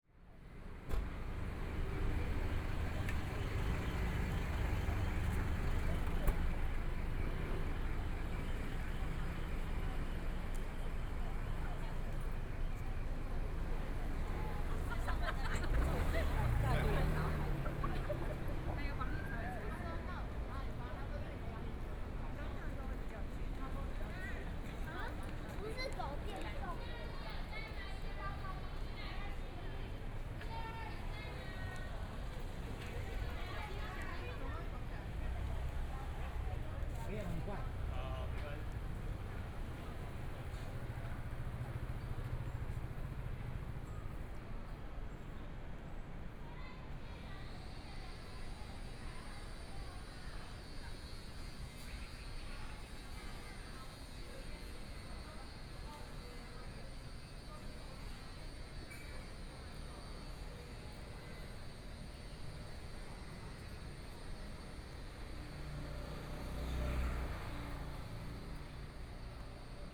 ZhongShan N.Rd.Taipei - Walking in the street
Walking in the street, Traffic Sound, Through a variety of different shops, Binaural recordings, Zoom H4n+ Soundman OKM II
Taipei City, Taiwan, February 2014